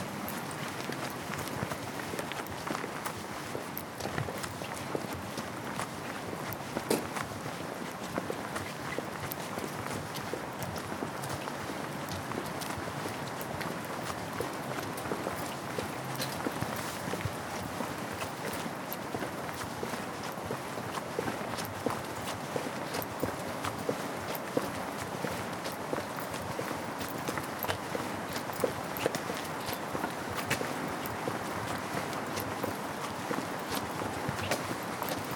small urban residential road. Surrounded by gardens and houses. Condell road traffic noise in a distance. Garden birds. Car.
Limerick, Ireland, 18 July 2014, 14:19